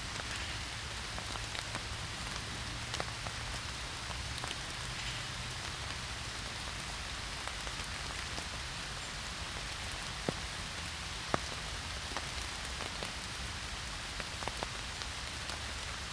Howwell wood, Hemsworth, UK - Rain in forest
Recorded with ZOOM H1 under an umbrella and a yew tree in the rain.